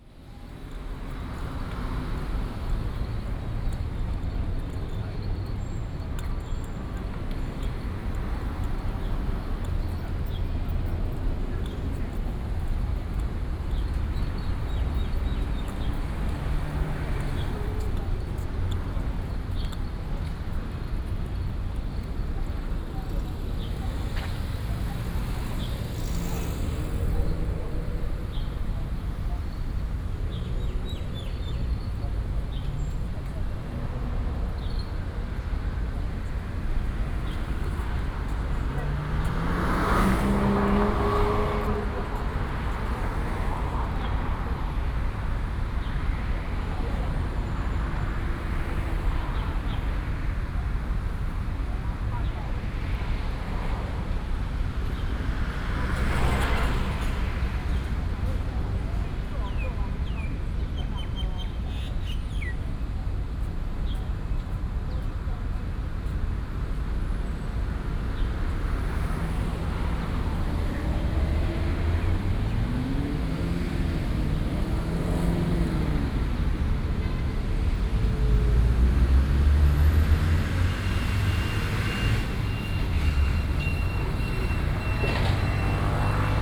Sec., Dunhua S. Rd., Da’an Dist., Taipei City - Waiting for the green light
Traffic Sound, Bird calls, Waiting for the green light, Separate island